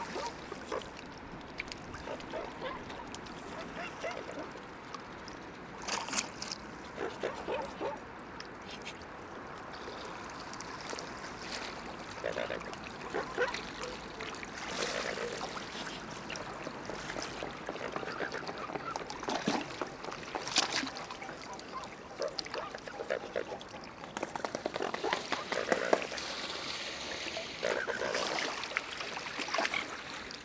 On the river Seine, swans come and want to eat me.
Montereau-Fault-Yonne, France, 28 December 2016, ~13:00